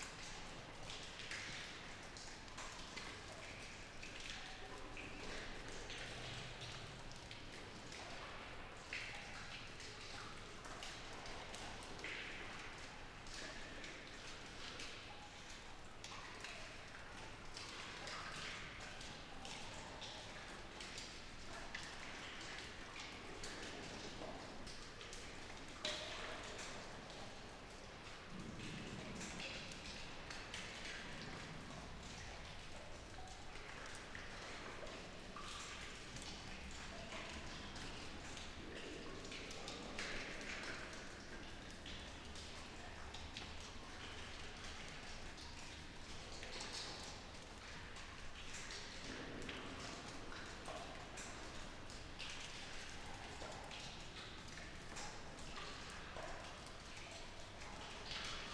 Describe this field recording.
Intérieur de galerie de ce La Coupole, gouttes d'eau et ruissellement dans ces galeries de craie calcaire, à l'acoustique tout à fait particulière. Ces galléries sont pour certaine pas entièrement "coffrées de béton" à la fin de la seconde guerre mondiale. Original recording, sd mix pré6II avec 2xDPA4021 dans Cinela Albert ORTF